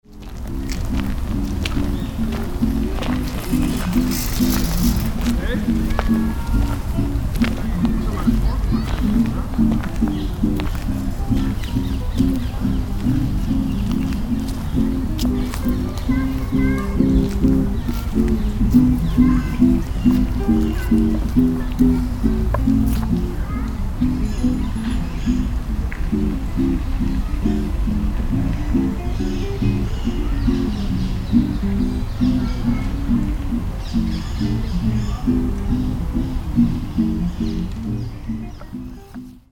cologne, stadtgarten, gitarrenspiel auf wiese - cologne, stadtgarten, gitarrenspiel auf wiese
gitarrenspiel auf wiese im park nord ost, stereofeldaufnahmen im september 07 nachmittags
project: klang raum garten/ sound in public spaces - in & outdoor nearfield recordings